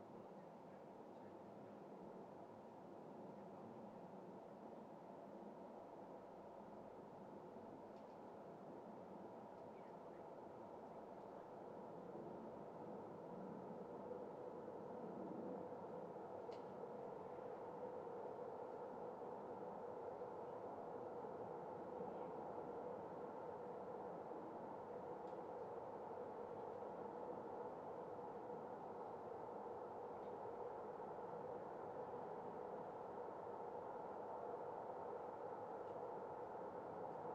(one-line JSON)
{"title": "Passing train approching the valley, near Děčín, Czech Republic - landscape shaping sound", "date": "2012-06-24 14:48:00", "description": "the recording was made on 12th of may (2012) in the evening hours, simply with a Zoom H4n. the place is located hillside over the labe/elbe river. trains passing the valley near Decin can be heard several minutes in advance, depending on the circumstances even up to almost 10 minutes (especially trains coming from the north direction). If you listen intently you can hear the certain filtering of the different meanders of the labe/elbe since the railway leads exactly along the river through the mountains. included other sounds: people talking nearby, dog (grisha) barking, no birds singing but airplane passing, ...\nUnfortunately I can't contribute a longer recording due to the bad wind protection I had at my disposal that day.", "latitude": "50.81", "longitude": "14.23", "altitude": "185", "timezone": "Europe/Prague"}